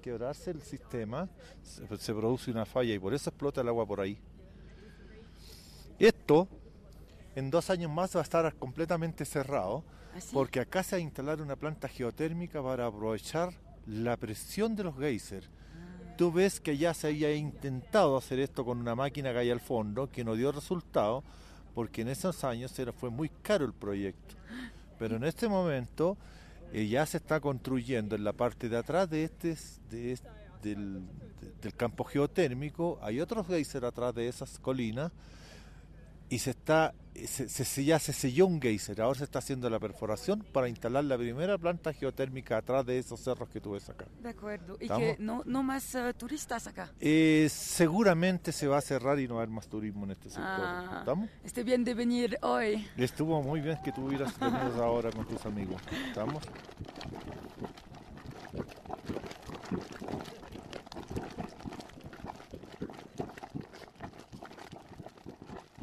Geysers, with explanantions from a guid
Antofagasta Region, Chile, 20 December, 08:13